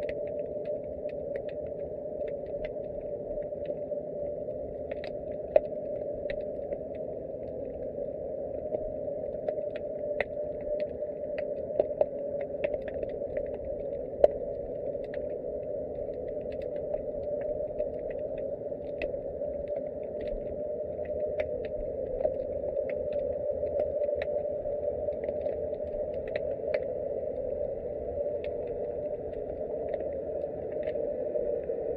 hypdrophone under Weymouth pier. Not sure what the haunting sound is, possible it is the sound of cars driving off the pier and onto the ferry.
Weymouth, Dorset, UK, 31 July 2010